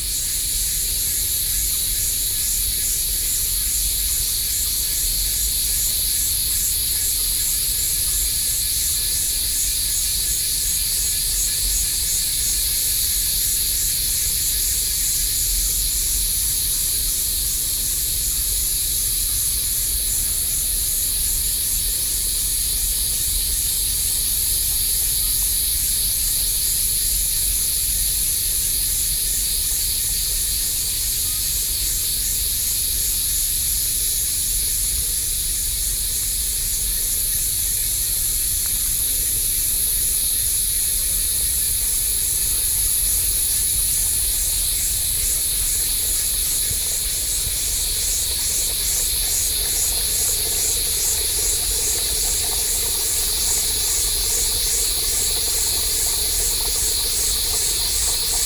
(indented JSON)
{
  "title": "Beitou, Taipei - Morning",
  "date": "2012-06-22 07:47:00",
  "description": "Natural ambient sounds of the morning in the mountains Sony PCM D50 + Soundman OKM II",
  "latitude": "25.14",
  "longitude": "121.48",
  "altitude": "92",
  "timezone": "Asia/Taipei"
}